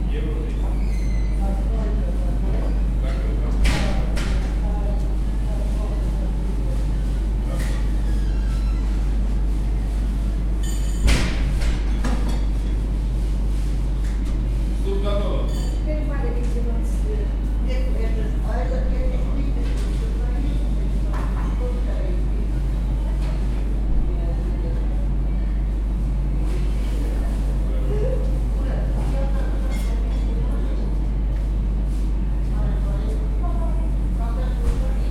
Balti Jaama Kohvik, Tallinn, Estonia - Cheburek commons
A genuine blend of pan- and post-Soviet cultures, a low-threshold eatery for all, and a genuine common of sorts, wrapped in the smell and sound of chebureki deep in the frying.